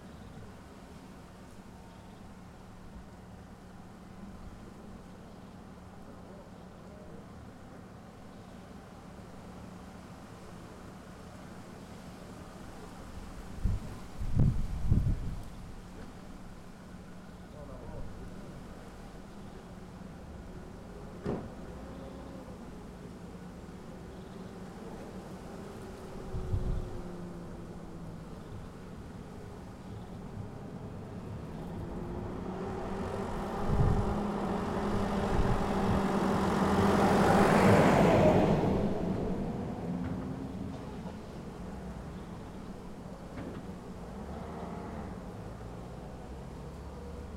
Carretera General Arico Viejo, Arico Viejo, Santa Cruz de Tenerife, Hiszpania - The center of Arico Viejo
An ambient from a town located on Tenerife Island recorded from a bench. Cars passing by. Birds tweeting. Recording starts from opening a can of beer and finishes when the beer is over.